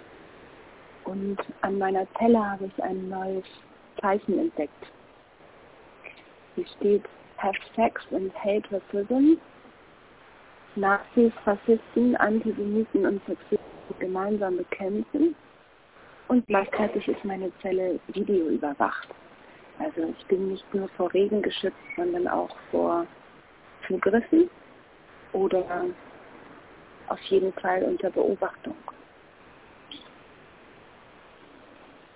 Telefonzelle, Dieffenbachstraße - videoüberwacht 07.08.2007 09:15:10